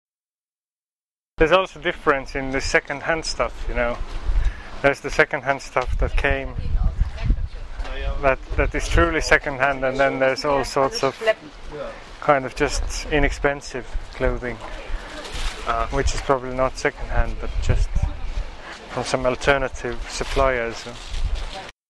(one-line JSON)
{"title": "Baltijaam Market -Andres discuss second hand products", "date": "2008-04-21 12:31:00", "description": "conversation with Andres Kurg about history and trajectory of Baltijaam market", "latitude": "59.44", "longitude": "24.74", "altitude": "18", "timezone": "Europe/Tallinn"}